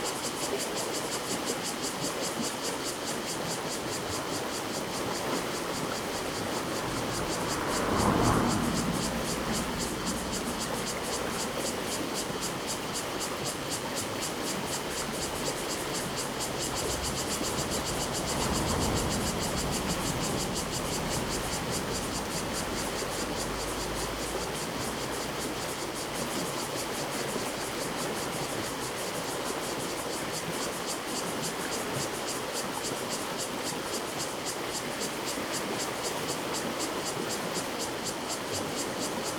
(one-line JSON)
{"title": "Minquan Rd., Guanshan Township - Cicadas and streams", "date": "2014-09-07 11:41:00", "description": "Cicadas sound, Traffic Sound, Very hot weather\nZoom H2n MS+ XY", "latitude": "23.05", "longitude": "121.15", "altitude": "259", "timezone": "Asia/Taipei"}